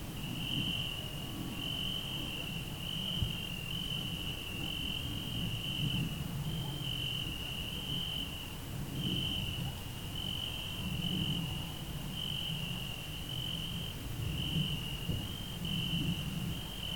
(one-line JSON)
{"title": "Unnamed Road, Aminteo, Greece - Night sounds in the field", "date": "2021-08-28 00:47:00", "description": "Record by: Alexandros Hadjitimotheou", "latitude": "40.66", "longitude": "21.73", "altitude": "538", "timezone": "Europe/Athens"}